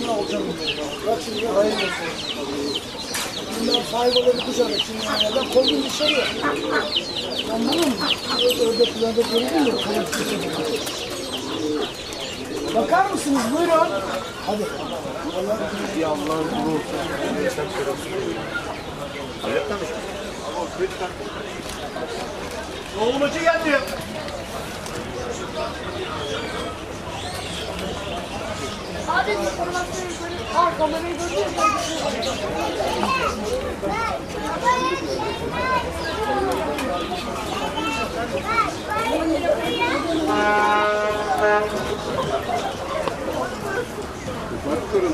Epitomizing the citys density are these birds, squeezed into cages. There are chicken, chicks, geese, pidgeons, parakeets and partridges, not for ornithological pleasure alone...